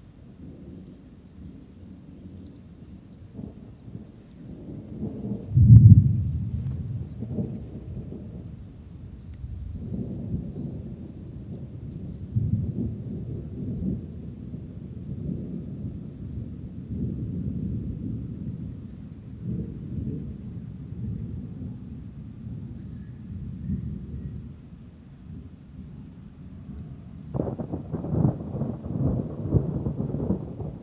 Summer storm arriving bringing some cooler weather